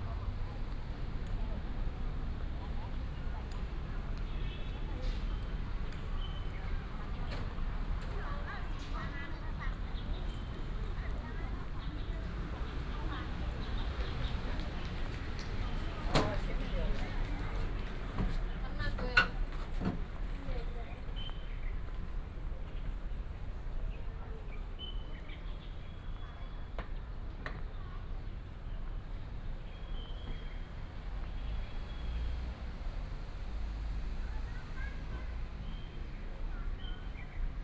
臺北孔子廟, Datong Dist., Taipei City - Walking in the temple
Walking in the temple, Traffic sound, sound of birds